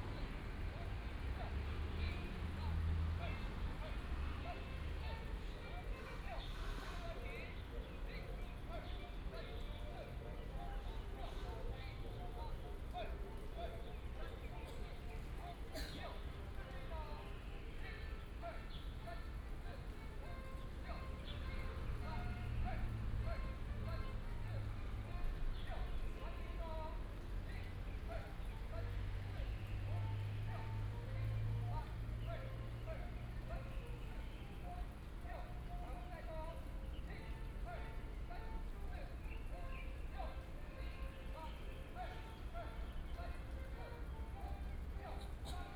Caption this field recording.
Several kinds of birds sounded, in the park, Birds call, Healthy gymnastics, Binaural recordings, Sony PCM D100+ Soundman OKM II